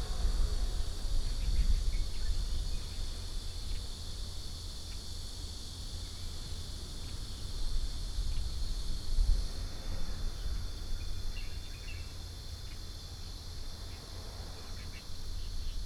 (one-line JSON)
{"title": "Gengxin Rd., Toucheng Township - Small towns", "date": "2014-07-07 14:20:00", "description": "Small towns, Birdsong, Very hot weather, Traffic Sound", "latitude": "24.90", "longitude": "121.86", "altitude": "14", "timezone": "Asia/Taipei"}